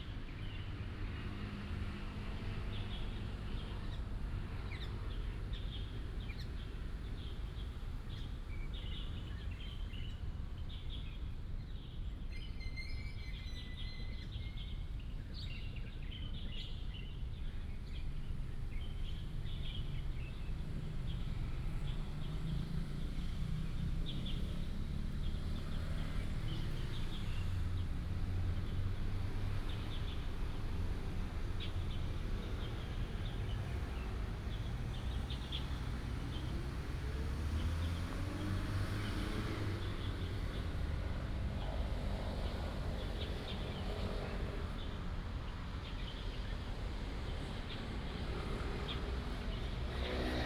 仁德公園, Zhongli Dist., Taoyuan City - in the Park
in the Park, Birds sound, Traffic sound, Binaural recordings, Sony PCM D100+ Soundman OKM II
Taoyuan City, Taiwan, 29 November, 08:20